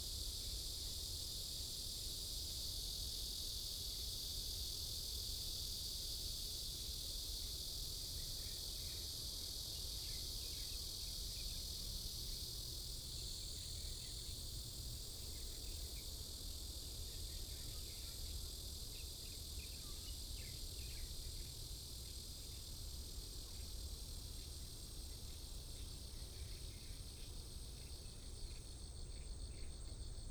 Cicadas sound, Traffic Sound, Birdsong sound, Windbreaks
Sony PCM D50+ Soundman OKM II
Yilan County, Taiwan, 26 July